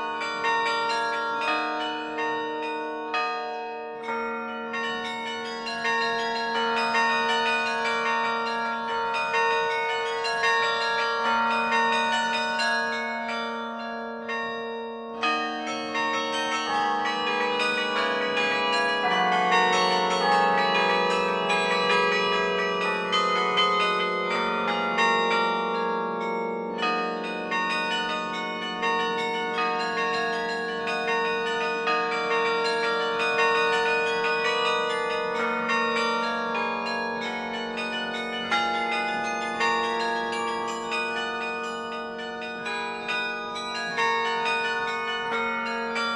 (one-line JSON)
{"title": "Lieu-dit Les Pres Du Roy, Le Quesnoy, France - Le Quesnoy - Carillon", "date": "2020-06-14 10:00:00", "description": "Le Quesnoy - Carillon\nMaître carillonneur : Mr Charles Dairay", "latitude": "50.25", "longitude": "3.64", "altitude": "132", "timezone": "Europe/Paris"}